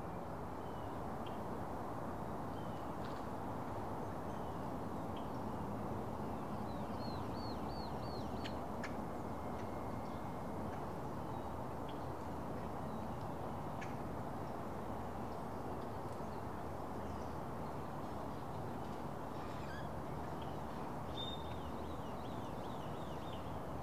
Milburn Ln, Austin, TX, USA - Mansell Bridge
Recording facing the east. A quiet afternoon that still has a lot of activity in the distance. Some birds, the nearby bridge, and some arriving aircraft.